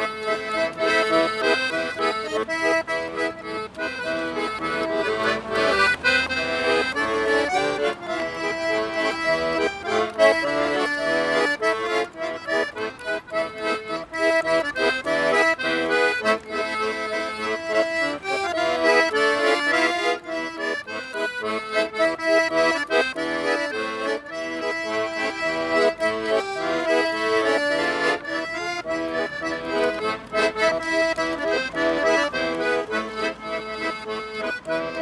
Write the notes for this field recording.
mr. marian from romania plays accordion in front of the shopping centre